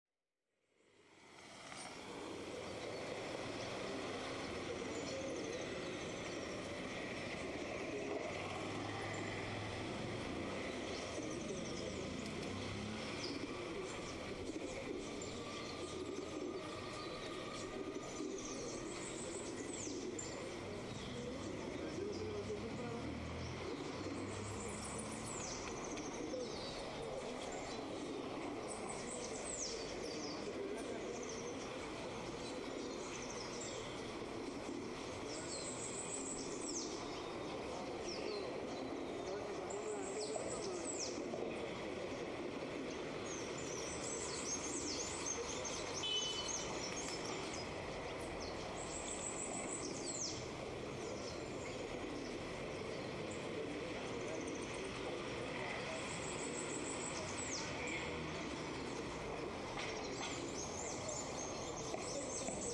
La Mesa is a place known for its tranquility and for being a good place to live and a sample of this is its central park in the morning hours, when the business has not yet opened its doors and we can hear the naturalness of the place. This is how the following characteristics allow us to feel: First of all, and out of social daily life, in the background we hear a slight traffic that is responsible for giving life to the fundamental sound of the park. In second sound position we find people talking in the early hours of the morning and it is here, under this particularity, that we can speak of the existence of a sound signal. And last but not least we have the great actors of this place, the birds and the pigeons, two groups of inevitable friends of a good central park in Colombia and it goes without saying that these two are in charge of carrying this sound brand of this place.
Tape recorder: Olympus DIGITAL VOICE RECORDER WS-852